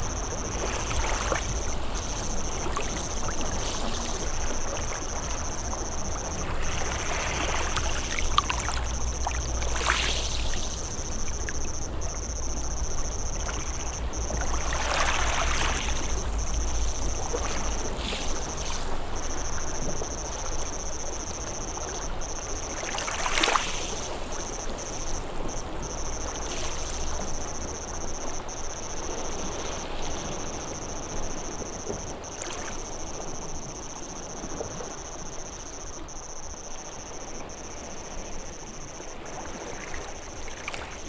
Bd Stephanopoli de Comene, Ajaccio, France - les Sanguinaires Plage Corse
Wave Sound
Captation : ZOOM H6
Corse, France métropolitaine, France, 26 July